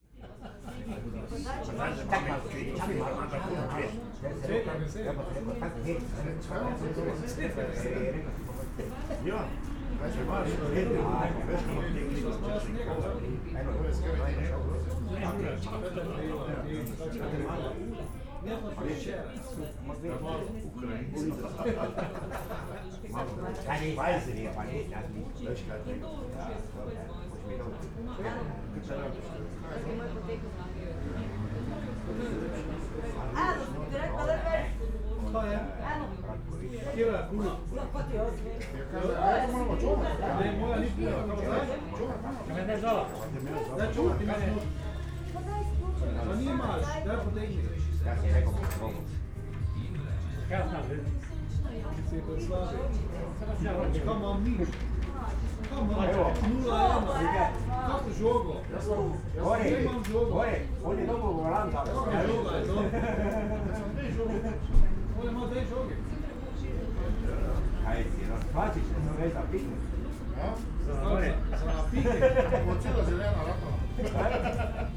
after hours on the bike, having a break at a pub in Tezno.